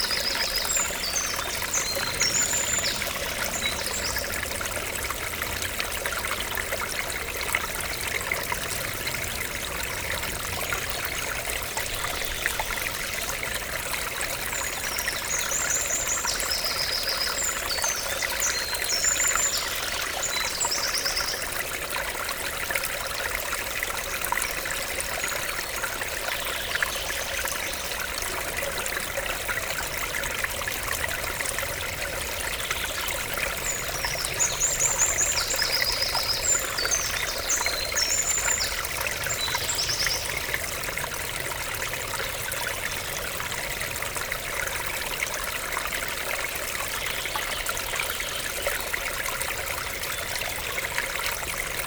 {
  "title": "Court-St.-Étienne, Belgique - Ry Sainte-Gertrude stream",
  "date": "2016-07-06 12:10:00",
  "description": "The ry Sainte-Gertrude river, a small stream in the woods. Very quiet ambience, woods, water and birds.",
  "latitude": "50.61",
  "longitude": "4.57",
  "altitude": "136",
  "timezone": "Europe/Brussels"
}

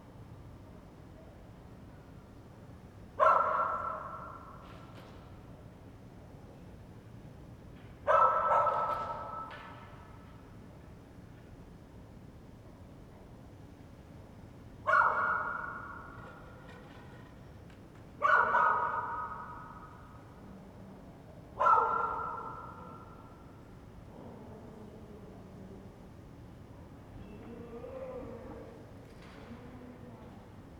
{
  "title": "Ascolto il tuo cuore, città. I listen to your heart, city. Several chapters **SCROLL DOWN FOR ALL RECORDINGS** - Terrace late December round 2 p.m. and barking Lucy in the time of COVID19",
  "date": "2021-12-28 14:20:00",
  "description": "\"Terrace late December round 2 p.m. and barking Lucy in the time of COVID19\" Soundscape\nChapter CLXXXIII of Ascolto il tuo cuore, città. I listen to your heart, city\nTuesday December 28th 2021. Fixed position on an internal terrace at San Salvario district Turin, About one year and four months after emergency disposition due to the epidemic of COVID19.\nStart at 2:20 p.m. end at 2:57 p.m. duration of recording 36'55''.",
  "latitude": "45.06",
  "longitude": "7.69",
  "altitude": "245",
  "timezone": "Europe/Rome"
}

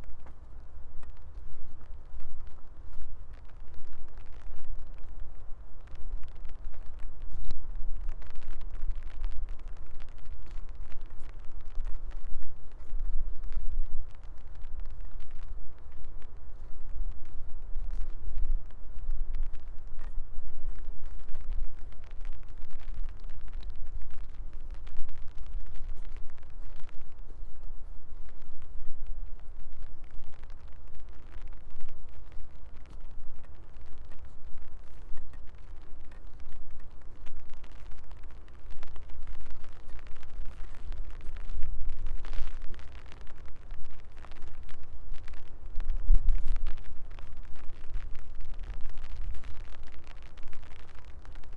{
  "title": "path of seasons, Piramida, Maribor - soft rain, umbrella",
  "date": "2013-12-25 14:10:00",
  "latitude": "46.57",
  "longitude": "15.65",
  "altitude": "373",
  "timezone": "Europe/Ljubljana"
}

